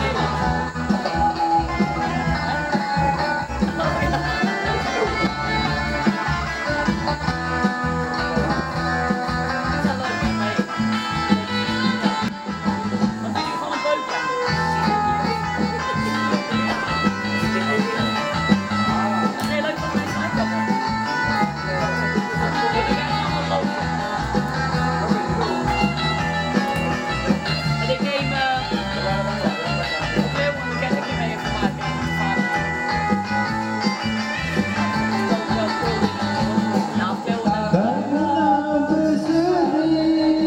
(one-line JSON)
{"title": "Unnamed Road, Pekan, Pahang, Maleisië - kareoke a gogo", "date": "2006-01-12 15:14:00", "description": "all day long this small shop/canteen and surroundings are terrorized by local talent.", "latitude": "3.43", "longitude": "102.92", "altitude": "64", "timezone": "Asia/Kuala_Lumpur"}